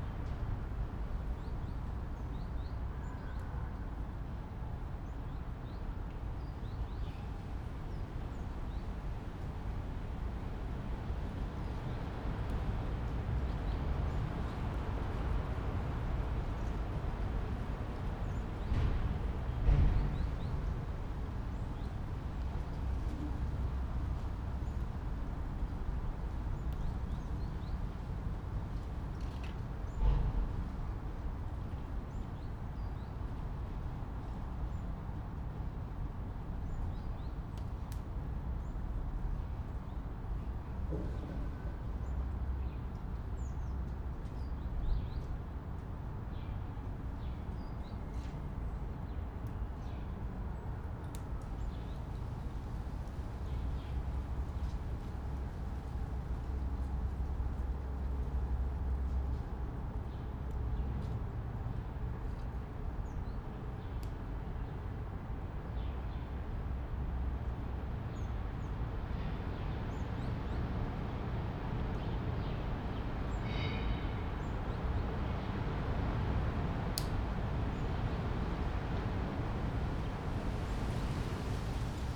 Berlin Bürknerstr., backyard window - wind roar in distant birches
a nice breeze creates an impressive roar in the birches 100m away, mixing with the diffuse sound of distant traffic
(SD702, MKH8020)